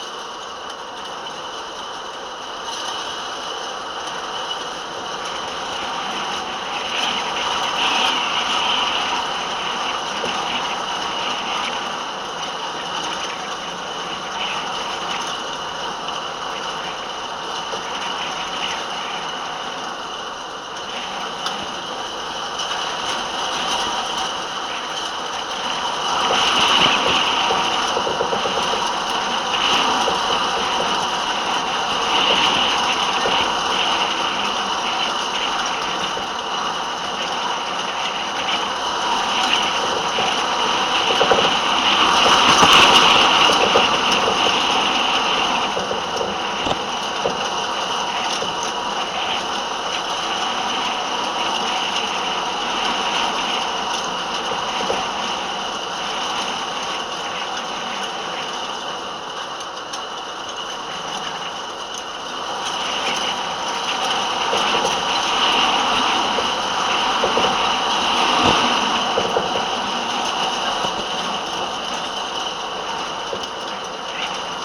Galvanised stock wire fencing with the wind blowing a gale ... two contact mics pushed into the wire elements ... listening to the ensuing clatter on headphones was wonderful ...
Malton, UK, 25 December 2013